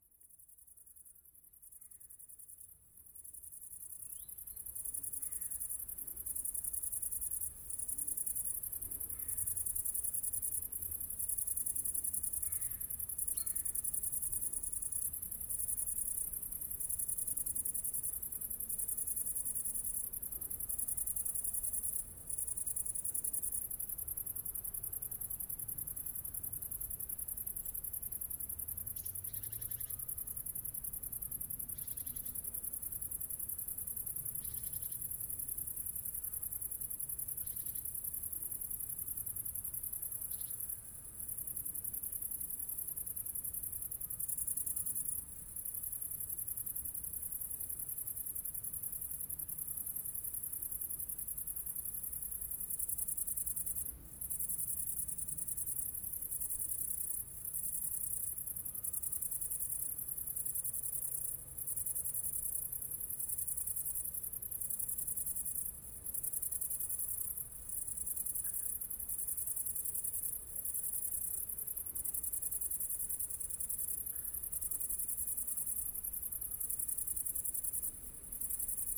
Mont-Saint-Guibert, Belgique - Criquets
Criquets in an orchard, in a very quiet landscape.
Mont-Saint-Guibert, Belgium, 14 August 2016, ~20:00